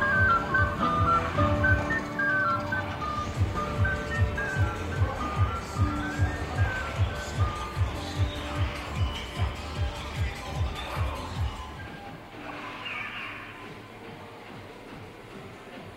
West Bay, Dorset, UK - end of walk to West Bay
end of 'soundwalk' with binaurals from end of West Bay path, amusement hall at caravan park, water-gate into harbour from the bridge.
United Kingdom, European Union, 18 July 2013